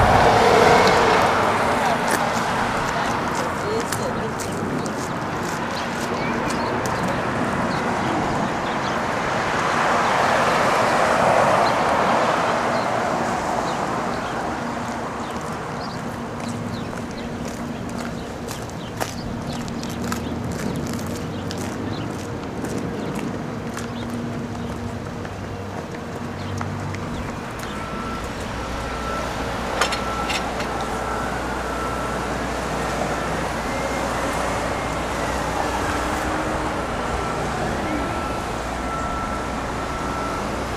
Carousel on the National Mall, DC
A carousel in front of the Smithsonian Castle and Arts & Industry Bldg. on the National Mall (Henry Park) in Washington, DC. Followed by a bike ride east toward the Capitol. Sounds include carousel music, buses (gasoline and electric), and reverse beeping sound from construction vehicles.
DC, USA, 2 November